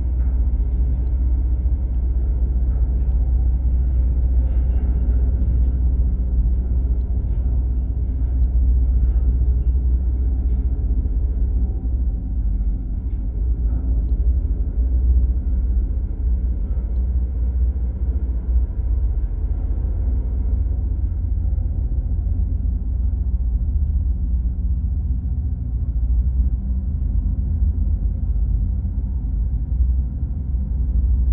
Utena, Lithuania, abandoned hangar
amall local aeroport. abandoned hangar. contact microphones on the massive doors. low frequencies!
Utenos apskritis, Lietuva, 30 July 2019